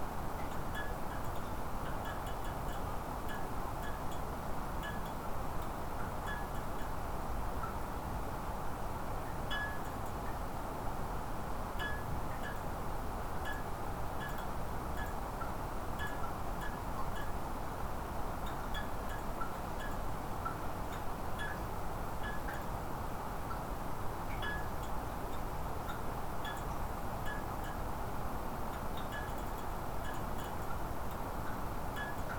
studio, Berlin-Treptow - emitter19 restroom
halogen lights and water
Berlin, Germany, 23 November 2012